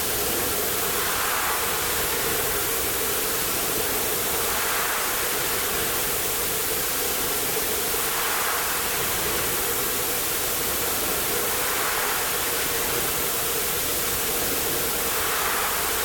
This is an archive recording of the several enormous pumps, which were turning when this factory was active. It was pumping water in the "Meuse", in aim to give water to this enormous blast furnace.
Seraing, Belgium, 1 August 2009